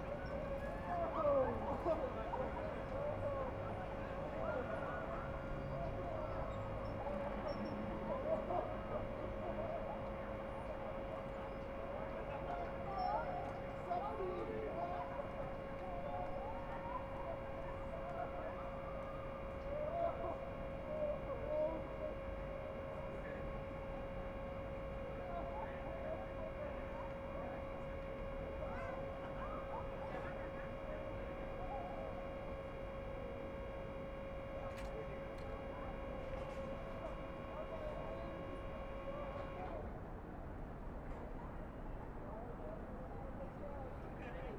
Auckland, New Zealand - Bungy Rocket Shoot
A couple of film school classmates on a ride that's beside the Sky City Tower in Auckland.